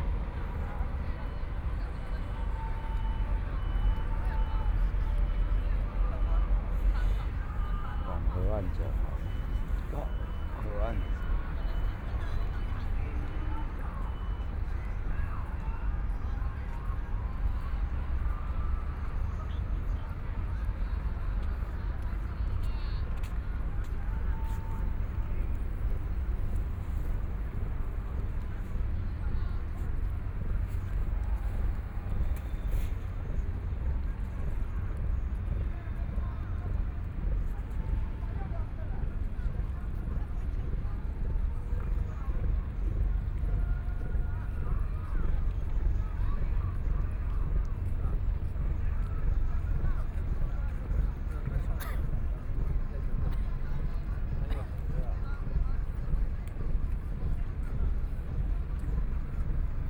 the Bund, Shanghai - environmental sounds
sound of the Boat traveling through, Many tourists, In the back of the clock tower chimes, Binaural recordings, Zoom H6+ Soundman OKM II